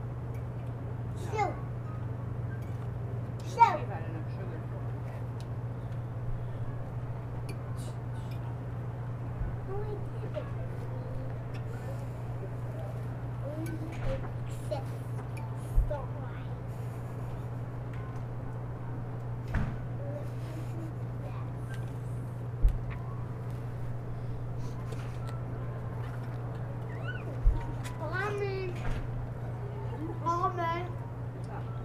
wow. a busy noontime at everyones favorite cafe: CAFE FINA. blanca rests out in the parking lot as chinqi really nails this one.